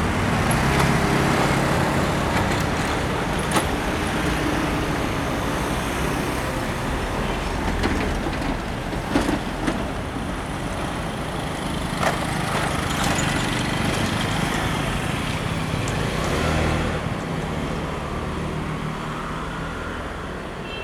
Level crossing, Train traveling through, Sony Hi-MD MZ-RH1, Rode NT4